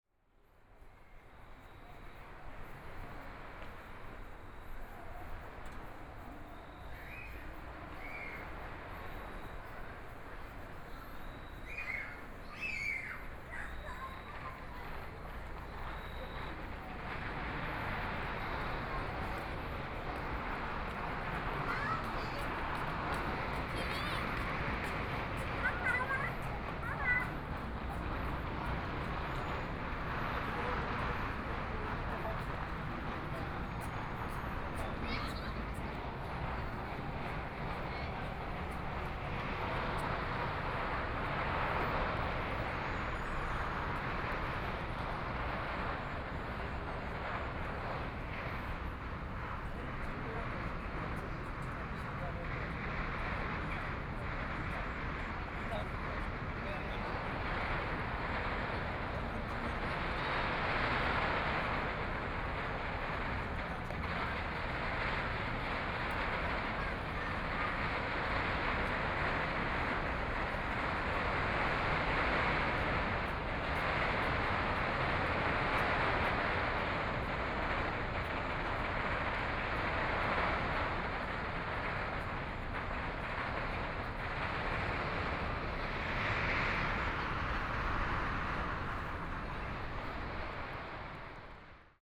{
  "title": "碧湖公園, Taipei City - Fireworks sound",
  "date": "2014-04-12 20:19:00",
  "description": "Distance came the sound of fireworks\nPlease turn up the volume a little. Binaural recordings, Sony PCM D100+ Soundman OKM II",
  "latitude": "25.08",
  "longitude": "121.58",
  "altitude": "19",
  "timezone": "Asia/Taipei"
}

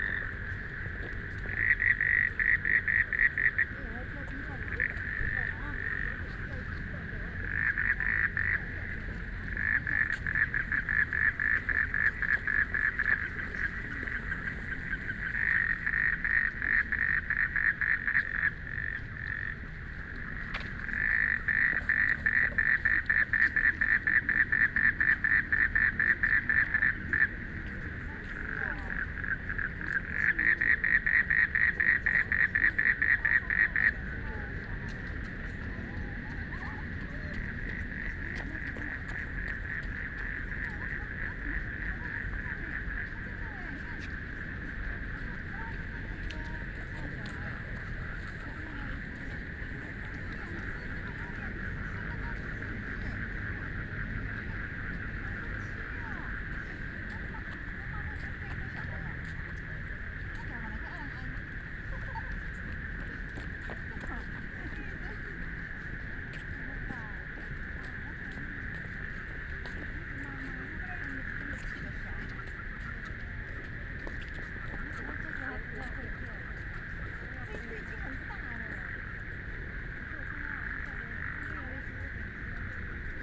{
  "title": "BiHu Park, Taipei City - Frogs sound",
  "date": "2014-03-19 20:42:00",
  "description": "The park at night, Traffic Sound, People walking and running, Frogs sound\nBinaural recordings",
  "latitude": "25.08",
  "longitude": "121.58",
  "altitude": "13",
  "timezone": "Asia/Taipei"
}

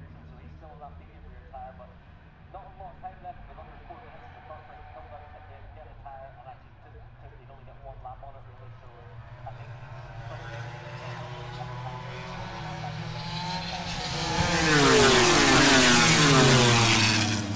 {
  "title": "Silverstone Circuit, Towcester, UK - british motorcycle grand prix ... 2021",
  "date": "2021-08-27 14:10:00",
  "description": "moto grand prix free practice two ... maggotts ... dpa 4060s to MixPre3 ...",
  "latitude": "52.07",
  "longitude": "-1.01",
  "altitude": "158",
  "timezone": "Europe/London"
}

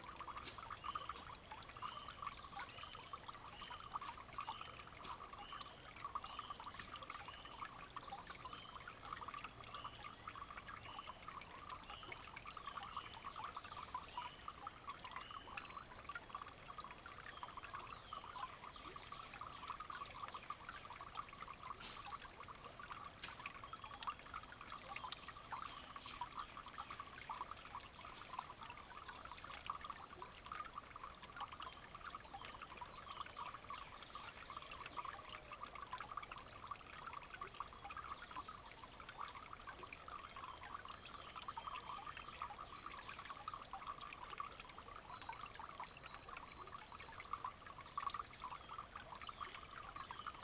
{
  "title": "Lone Wolf Trail Spring, Ballwin, Missouri, USA - Lone Wolf Hill",
  "date": "2021-04-13 18:42:00",
  "description": "Recording of a spring emptying into a pool at the base of steep hill along Lone Wolf Trail in Castlewood State Park. A deer higher up the hill can be heard stomping its scent into the ground.",
  "latitude": "38.55",
  "longitude": "-90.54",
  "altitude": "135",
  "timezone": "America/Chicago"
}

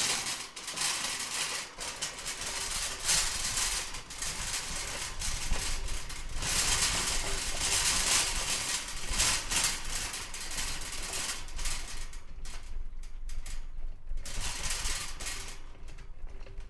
{
  "title": "trolley rattles inside Value House",
  "date": "2013-06-13 15:42:00",
  "description": "rec using sony dcm50",
  "latitude": "50.60",
  "longitude": "-2.49",
  "altitude": "53",
  "timezone": "Europe/London"
}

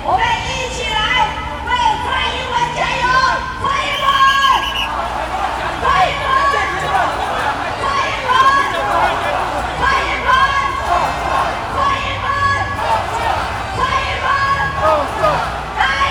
{
  "title": "Ketagalan Boulevard, Taipei - speech",
  "date": "2011-12-10 10:37:00",
  "description": "Ketagalan Boulevard, Occasions on Election-related Activities, Rode NT4+Zoom H4n",
  "latitude": "25.04",
  "longitude": "121.52",
  "altitude": "13",
  "timezone": "Asia/Taipei"
}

